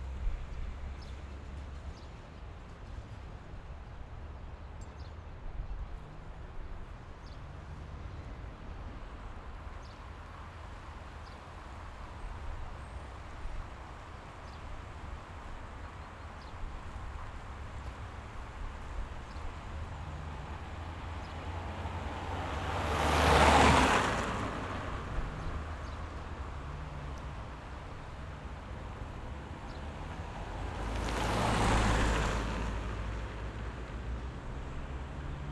ул. 50-летия ВЛКСМ, Челябинск, Челябинская обл., Россия - Morning, traffic, cars, tram, flying plane
Recorded at one of the major intersections of the Chelyabinsk microdistrict. Morning of the working day.
Zoom F1 + XYH6